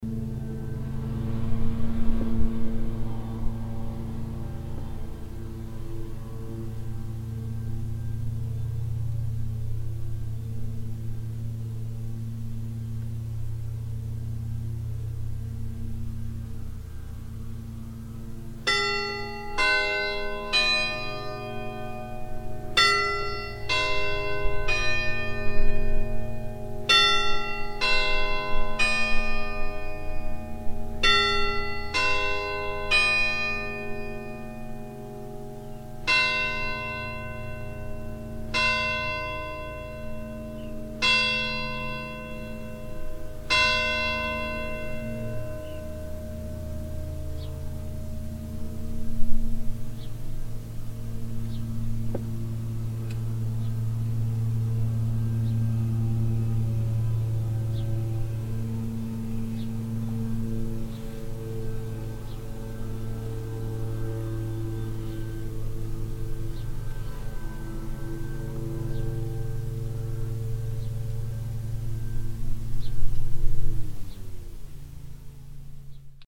merkholtz, saint-barhelemy church, bells
At the church on a early summer saturday afternoon. The bells of the Saint- Barhelemy church and the sonorous sound of a lawnmower.
Merkholtz, Kirche Sankt Barthelemy, Glocken
Bei der Kirche an einem frühen Samstag Nachmittag im Sommer. Die Glocken der Sankt-Barthelemy-Kirche und der sonore Ton eines Rasenmähers.
Merkholtz, église, cloches
L’église, un samedi après-midi au début de l’été. Les cloches de l’église Saint-Barthélemy et le bruit intense d’une tondeuse à gazon.
Project - Klangraum Our - topographic field recordings, sound objects and social ambiences